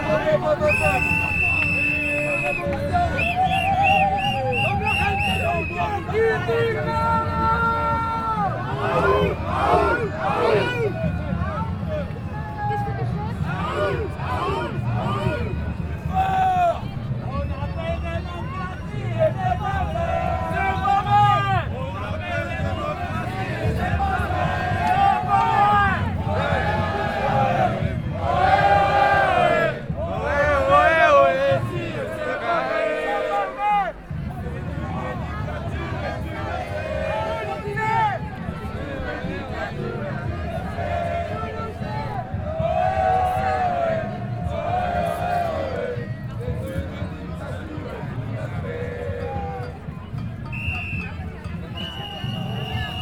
Occupy Brussels - Boulevard Simon Bolivar
Schaerbeek, Belgium, 15 October 2011